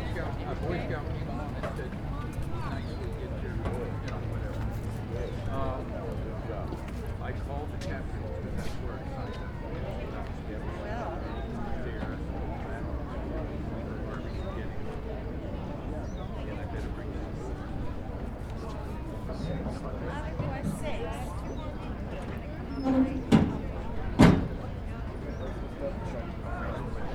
neoscenes: pancake breakfast cleaning up